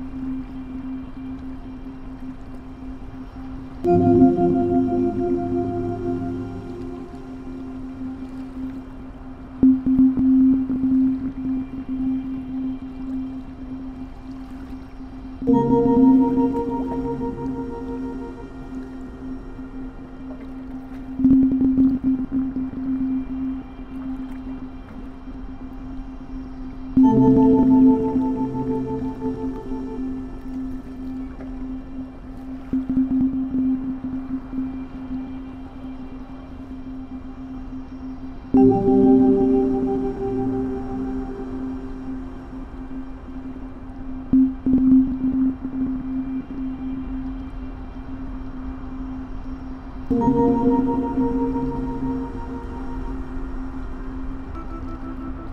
cologne, rheinseilbahn, klanginstallation 3klangreise
temporäre klanginstallation dreiklangreise
ort: koeln, rheinseilbahn
anlass: 50jähriges jubiläum der seilbahn
projekt im rahmen und auftrag der musiktriennale - koeln - fs - sound in public spaces
frühjahr 2007